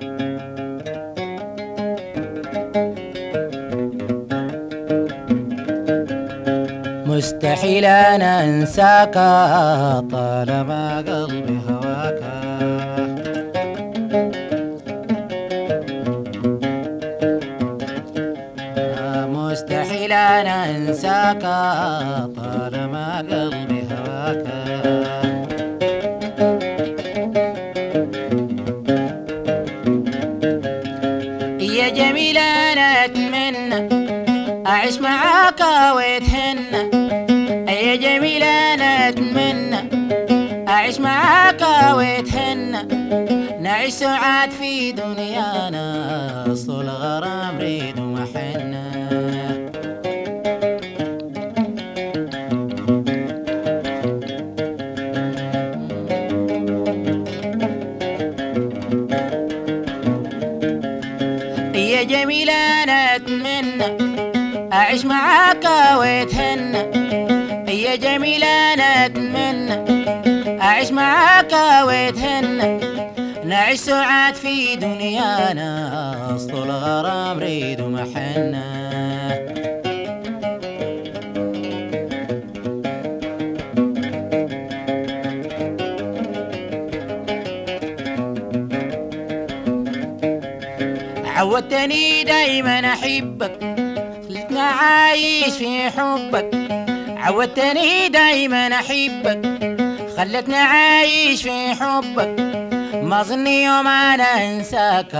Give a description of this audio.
On Tuti island (Djazira Tuti)i recorded taxi driver and singer Abdellatief Ahmad Idriss.